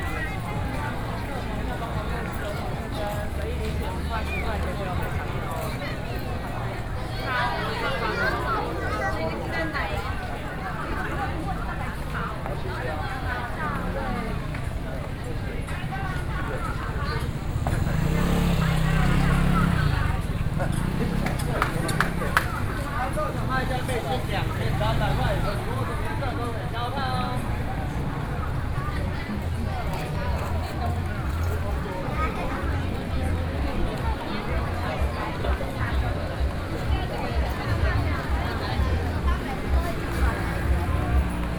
Yonghe, New Taipei City - Night Market
Night Market, Sony PCM D50 + Soundman OKM II